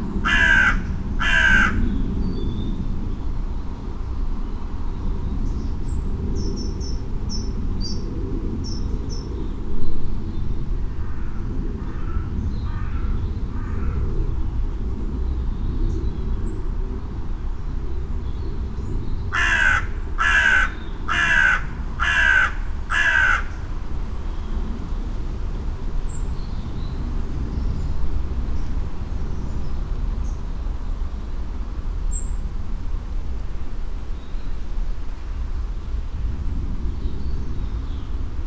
Recordings in the Garage, Malvern, Worcestershire, UK - Jet Crows Birds Feet
Calm after a windy night, a high jet, loud crows, some song birds and my feet as I recover the equipment from the garage.
England, United Kingdom, 7 October